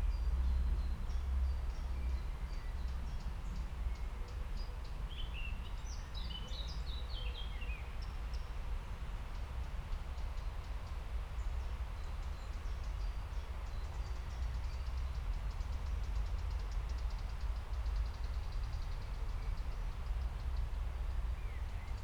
Friedhof Baumschulenweg, Berlin, Deutschland - cemetery ambience, trains
at the edge of cemetery Friedhof Baumschulenweg, Berlin, rather cold spring morning, trains passing by, city sounds in a distance, park ambience
(Sony PCM D50 DPA4060)